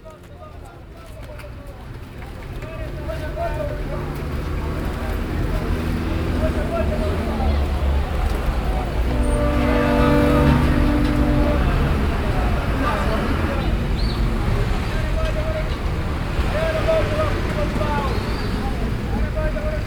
Nairobi Central, Nairobi, Kenya - Traders and Matatus...
A busy market street in the inner city; many wholesalers shops where street traders buy their goods; long lines of Matatu’s waiting and “hunting” for customers….